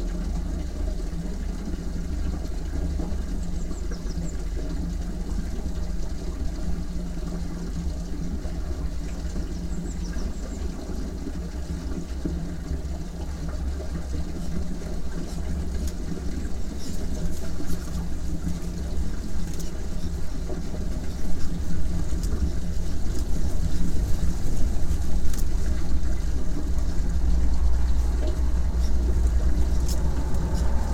Kimbartiškė, Lithuania. at small dam
some small dam. omni mics placed on the waterline...deep lows comes from the road.
Utenos apskritis, Lietuva